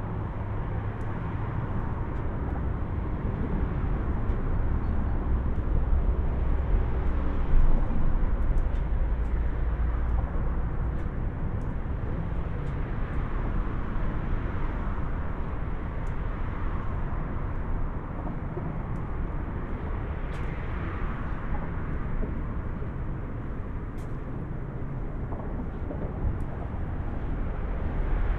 a sidewalk just below the driving surface of the Autobahn allows pedestrians to cross the river Hunte. The sound of passing-by cars at high speed dominates the soundscape.
(Sony PCM D50, Primo EM172)